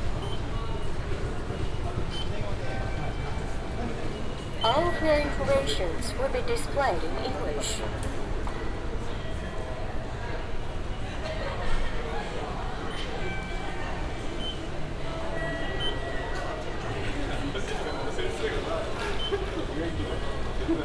yokohama, train station, ticket machine
At the central train station - the sound of the ticket machines and money coins.
international city scapes - topographic field recordings and social ambiences